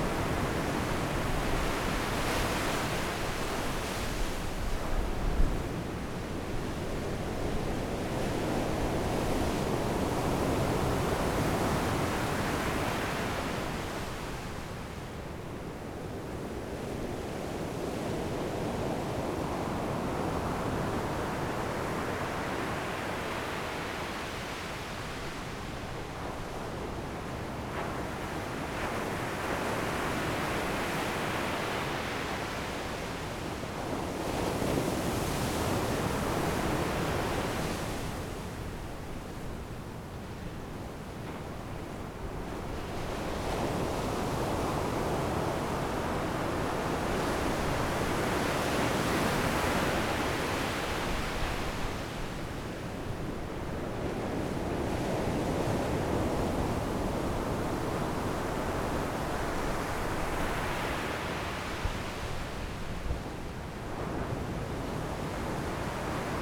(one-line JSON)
{"title": "台東市, Taiwan - The beach at night", "date": "2014-01-16 18:43:00", "description": "Sitting on the beach, The sound of the waves at night, Zoom H6 M/S", "latitude": "22.75", "longitude": "121.16", "timezone": "Asia/Taipei"}